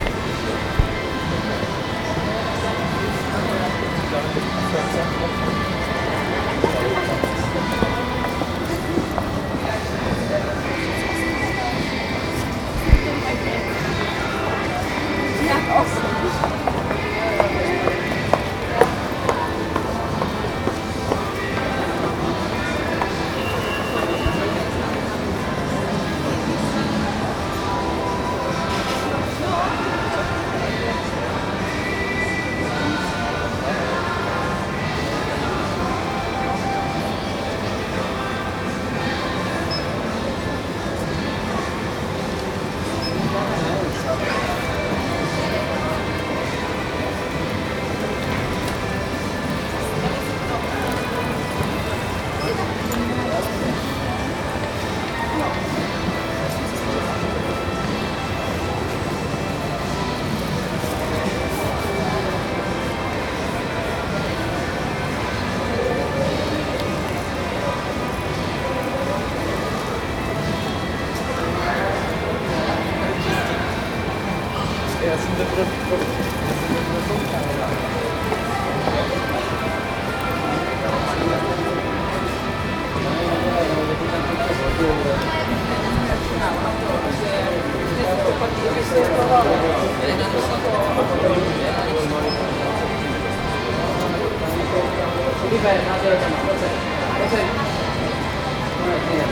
Palác Pardubice, Pardubice, Česko - Palác Pardubice
Recorded as part of the graduation work on sound perception.